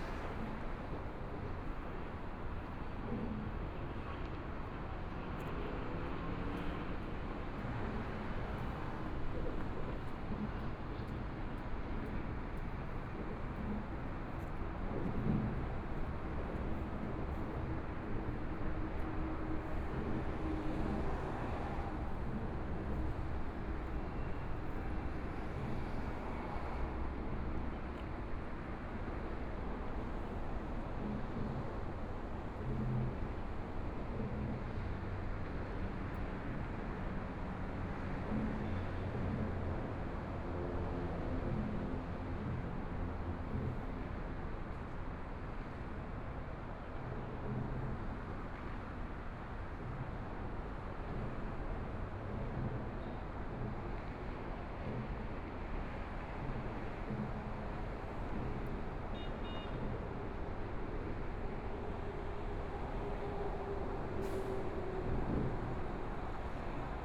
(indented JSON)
{
  "title": "大同區重慶里, Taipei City - Standing beneath the freeway lanes",
  "date": "2014-02-16 16:10:00",
  "description": "Standing beneath the freeway lanes, Traffic Sound, MRT train noise, Sound from highway, Binaural recordings, Zoom H4n+ Soundman OKM II",
  "latitude": "25.08",
  "longitude": "121.52",
  "timezone": "Asia/Taipei"
}